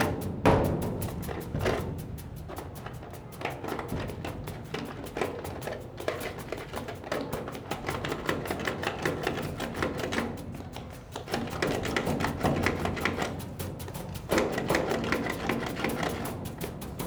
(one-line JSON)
{"title": "Calle Beatas, Malaga, Andalucia, Spain - Interaccion Plancha de metal WLD2016", "date": "2016-07-18 12:02:00", "description": "Interaccion en el espacio público por el Grupo de Activacion Sonora en el dia mundial de la escucha WLD2016", "latitude": "36.72", "longitude": "-4.42", "altitude": "21", "timezone": "Europe/Madrid"}